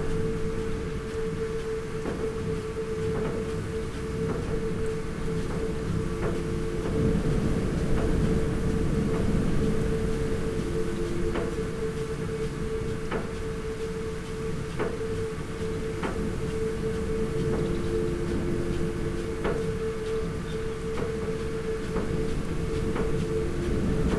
Green Ln, Malton, UK - field irrigation system ...
field irrigation system ... an eco star 4000S system unit ... this controls the water supply and gradually pulls the sprayer back to the unit ... dpa 4060s in parabolic to MixPre3 ...
Yorkshire and the Humber, England, United Kingdom, 23 May 2020